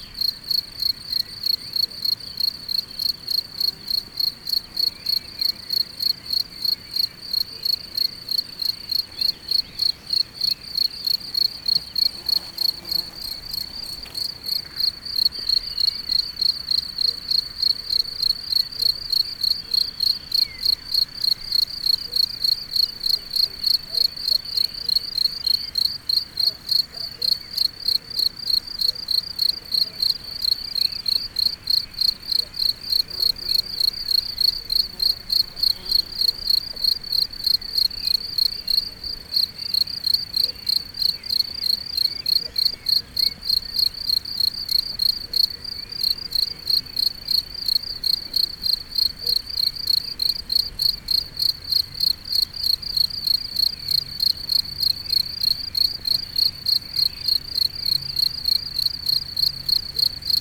Corbonod, France - Locusts
Locusts are singing in the grass during a hot summer evening in Corbonod, a small village where grape vine are everywhere and beautiful.